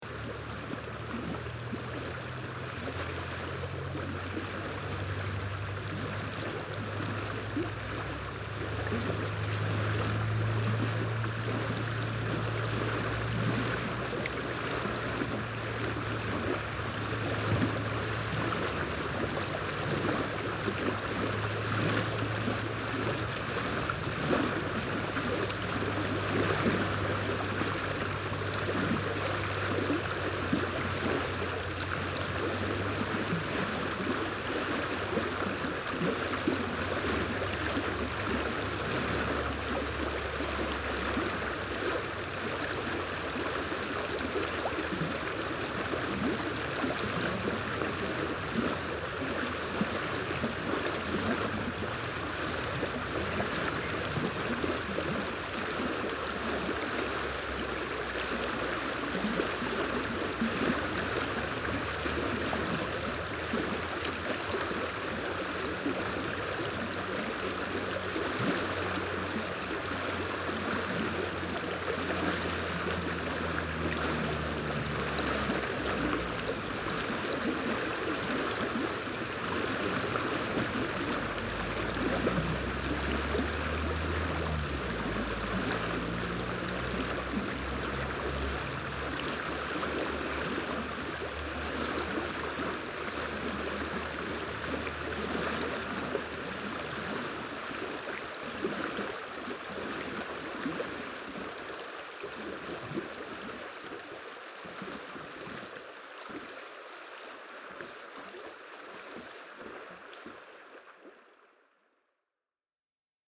Roth an der Our, Deutschland - Bettel, border river Our
Am Grenzfluß Our der nach einer regnerischen Nacht gut gefüllt und aufgewühlt hier gut knietief Wasser führt. Das Geräusch des fließenden Wassers. Im Hintergrund das sonore Brummen von Fahrzeugen auf der nahe liegenden Bundesstraße.
At the border river Our. After a rainy night the river is well filled with water and here about knee deep. The sound of the troubled water. In the background the sonorous hum of traffic at the main street.
7 August, 13:15